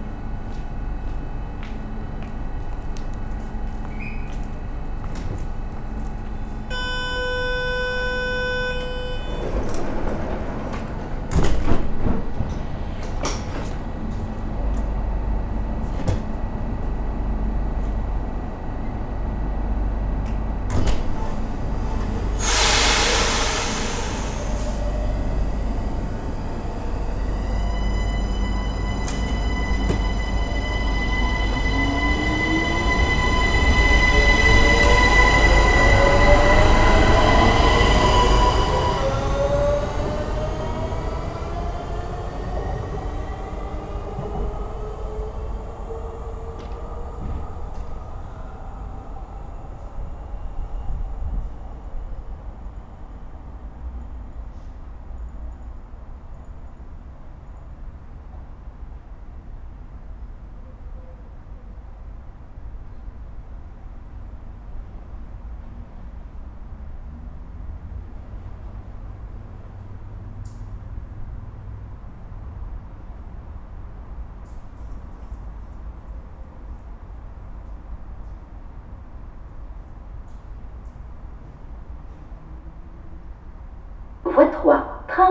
The Genval station. Someone is phoning just near me and a few time after the train arrives. Passengers board inside the train ; it's going to Brussels. A few time after, a second train arrives. It's not stopping in the station.